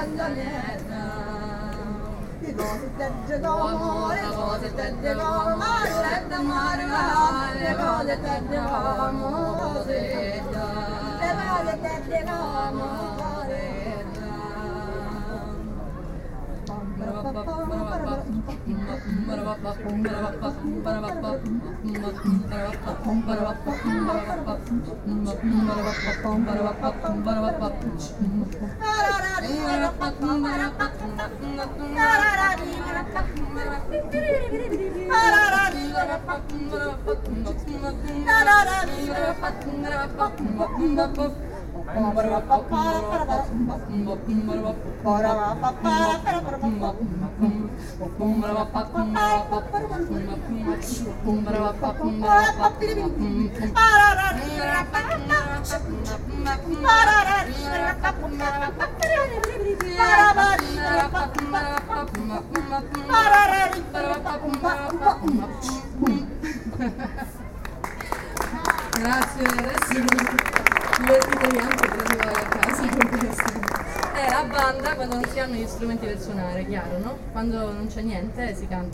Matilde Politti Simona di Gregorio - antichi canti femminili siciliani (edirol r-09hr)
SIC, Italia